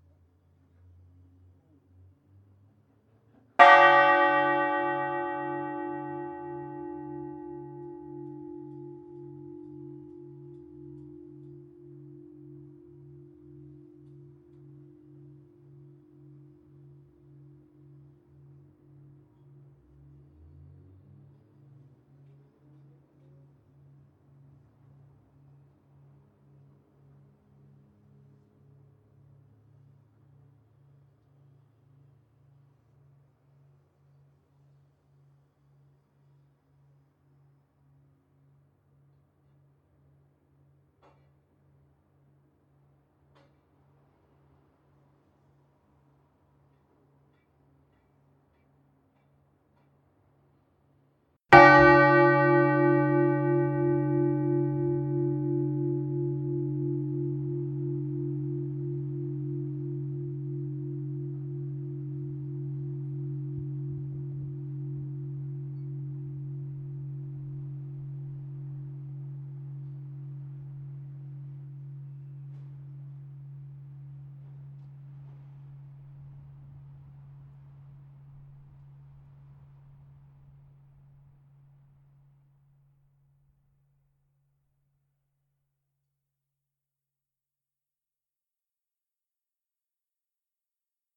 Rue du Paradis, Fruges, France - Cloche de Fruges - Tintement
Cloche de l'église de Fruges (Pas-de-Calais)
Le tintement.